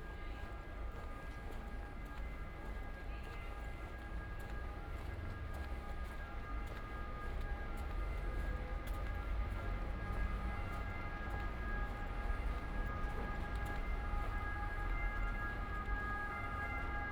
Ascolto il tuo cuore, città. I listen to your heart, city. Several chapters **SCROLL DOWN FOR ALL RECORDINGS** - It’s five o’clock with bells on Monday in the time of COVID19
"It’s five o’clock with bells on Monday in the time of COVID19" Soundwalk
Chapter XXXV of Ascolto il tuo cuore, città. I listen to your heart, city
Monday April 6th 2020. San Salvario district Turin, walking to Corso Vittorio Emanuele II and back, twentyseven days after emergency disposition due to the epidemic of COVID19.
Start at 4:50 p.m. end at 5:10p.m. duration of recording 19'10''
The entire path is associated with a synchronized GPS track recorded in the (kmz, kml, gpx) files downloadable here: